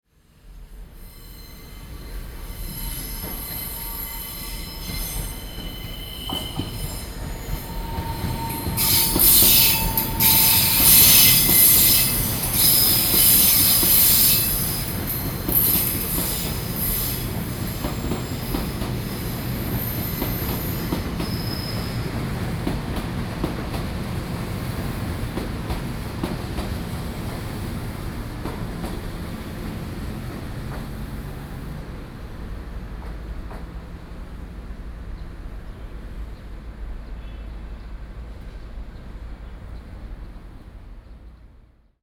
Ren'ai, Keelung - Train traveling through
Train traveling through, Sony PCM D50 + Soundman OKM II
信義區, 台北市 (Taipei City), 中華民國, 24 June 2012, 17:52